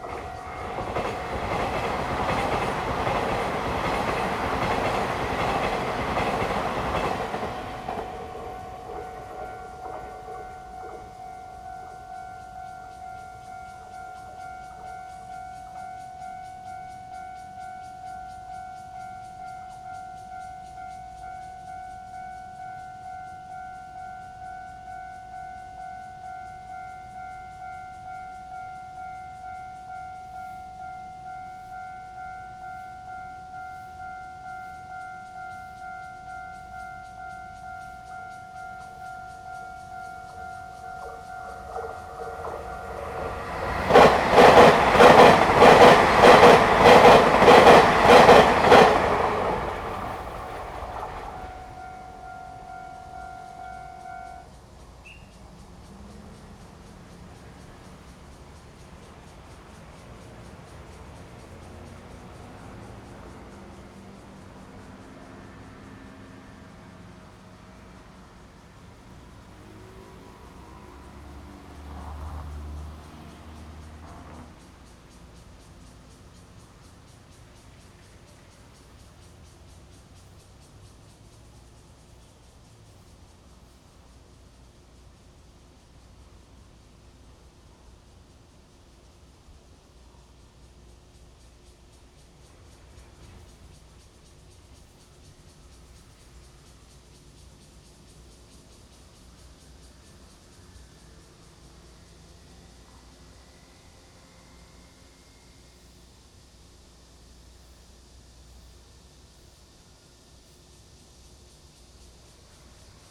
Xinguang Rd., Pingzhen Dist. - The train runs through
Next to the tracks, Cicada cry, traffic sound, The train runs through, The microphone is placed in the grass
Zoom H2n MS+ XY